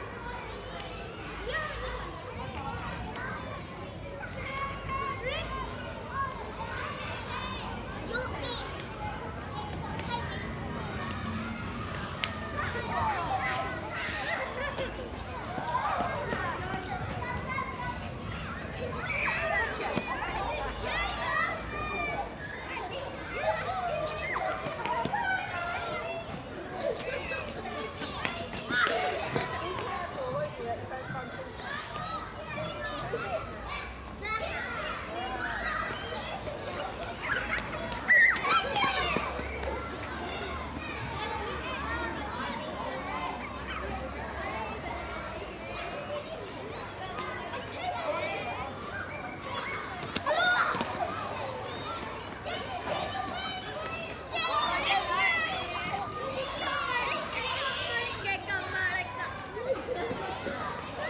Stoke Newington Church Street, London Borough of Hackney, Greater London, Vereinigtes Königreich - William Patten School, Stoke Newington Church Street, London - Afternoon break at the primary school
William Patten School, Stoke Newington Church Street, London - Afternoon break at the primary school. Children playing tag.
[Hi-MD-recorder Sony MZ-NH900, Beyerdynamic MCE 82]
England, United Kingdom, European Union, 14 February 2013